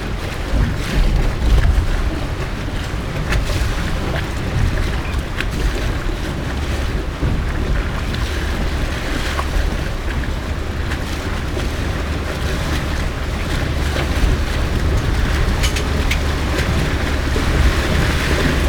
crossing the river Mura with wooden raft, which is attached to the metal rope, raft moves with flow of the river
Austria